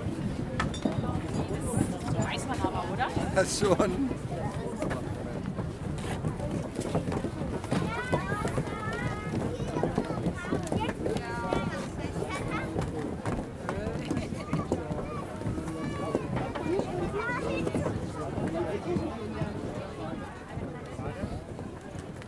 Kids and parents waiting for the public ice skating place will be opened.
Karlsruhe, Germany, 15 January 2019, 00:18